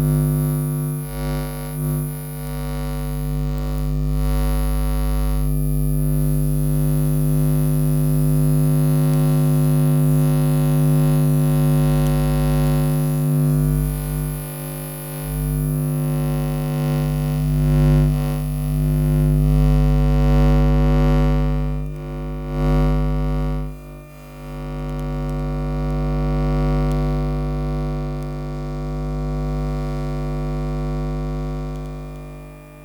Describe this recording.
recorded with two electromagnetic transducers, walking slowly around a fuse cabinet/electronic thingybob